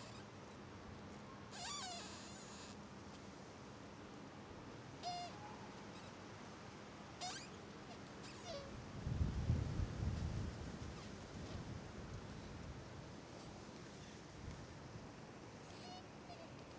tree noise at Fuchsloch, Muggenhof/Nürnberg
Nuremberg, Germany, April 2011